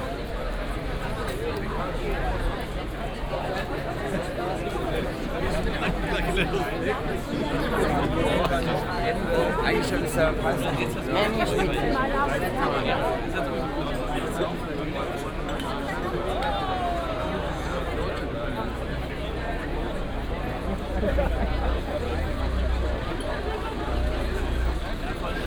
lots of people celebrating a warm summer evening at Brüsseler Platz. this place has become a public meeting point during the last years.
(Sony PCM D50 + OKM, binaural walk)